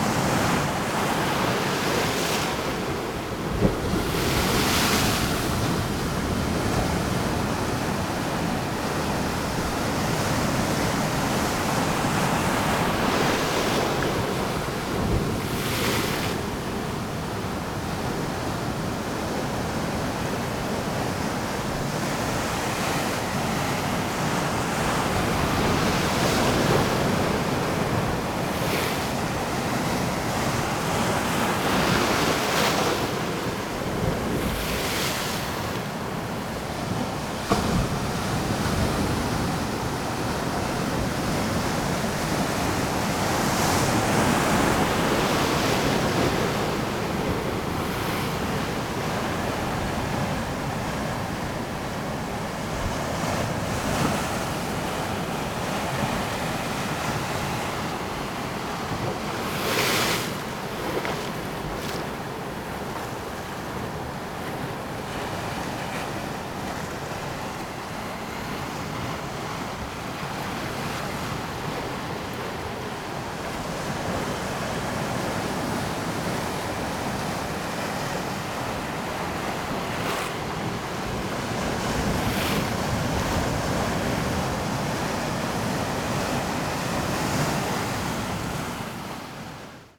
water crashing into rocks at the Playa de Benijo (sony d50)